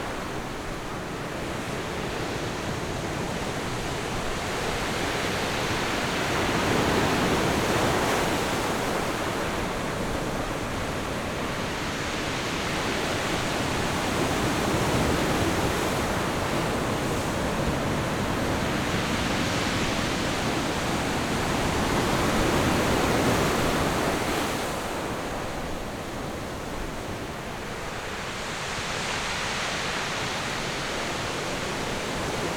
Koto island, Taiwan - sound of the waves
sound of the waves
Zoom H6 + Rode NT4
Lanyu Township, Taitung County, Taiwan, 29 October 2014, 11:55